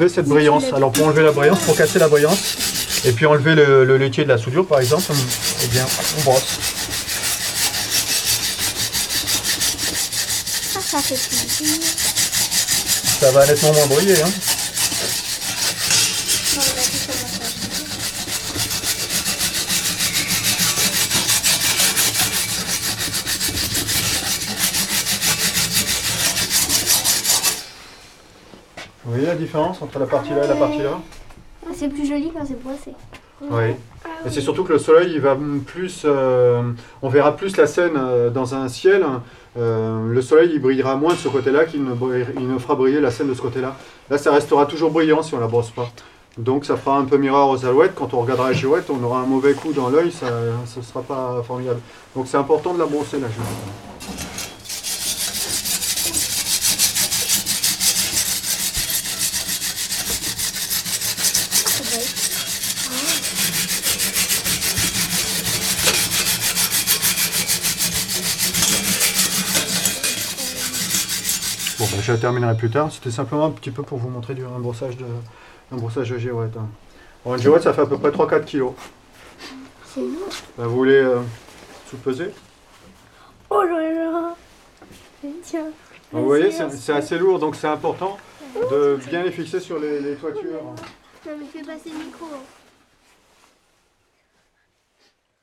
Le Bourg, Le Mage, France - Girouettier
Enregistrement dans l'atelier de Thierry Soret, Girouettier, Le Mage dans l'Orne. Dans le cadre de l'atelier "Ecouter ici ) ) )". Enregistreur Zoom H6 et paire de micros Neumann KM140.
6 February 2014, 14:33